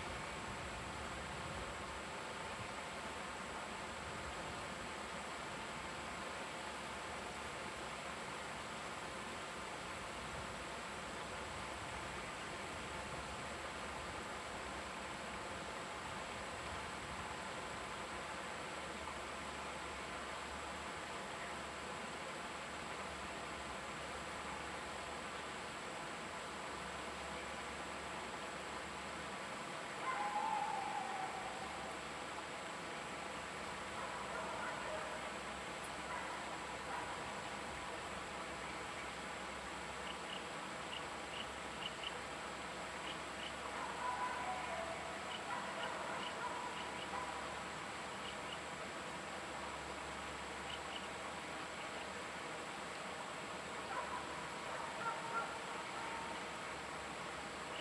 台板產業道路, Daren Township, Taitung County - On the bridge
On the bridge, traffic sound, Dog barking, Bird call, Stream sound
Zoom H2n MS+XY
Taitung County, Taiwan, 13 April 2018